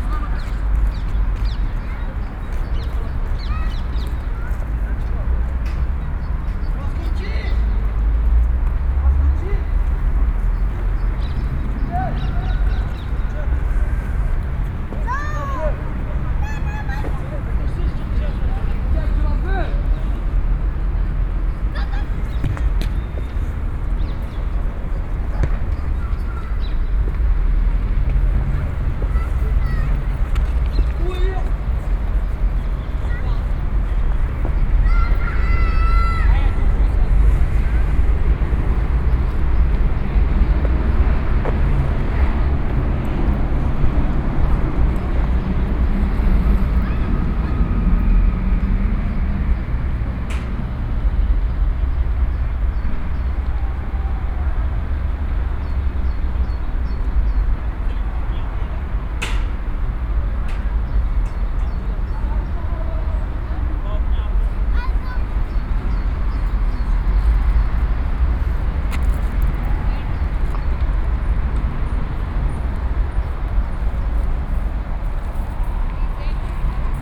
Brussels, Square Ambiorix
Children playing, busses all around
PCM-M10, SP-TFB-2, binaural.